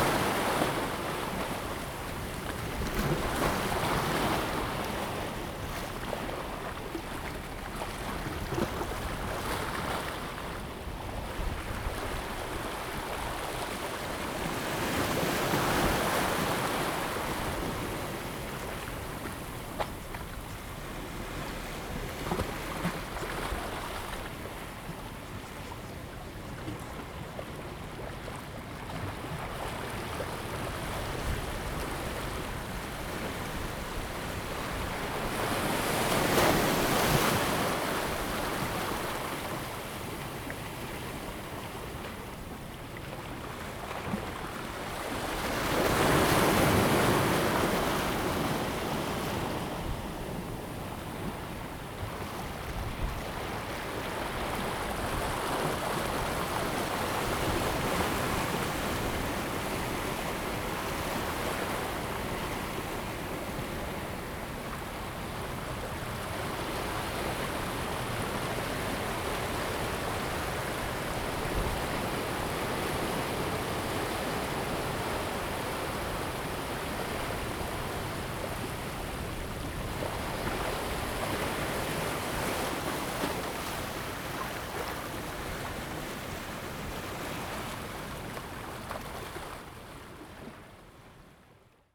長濱漁港, Changbin Township - In the fishing pier
In the fishing pier, Very large storm day
Zoom H2n MS +XY
Taitung County, Changbin Township, 9 October, 09:18